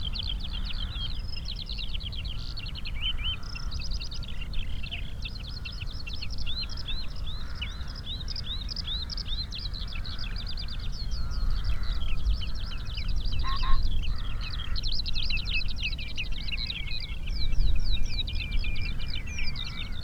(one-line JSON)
{"title": "Isle of Islay, UK - five bar gate soundscape ...", "date": "2018-05-25 06:45:00", "description": "five bar gate soundscape ... rspb loch gruinart ... sass lodged in the bars of a gate ... bird calls and song from ... snipe ... redshank ... lapwing ... greylag ... sedge warbler ... skylark ... jackdaw ... pheasant ... background noise ...", "latitude": "55.82", "longitude": "-6.34", "altitude": "1", "timezone": "Europe/London"}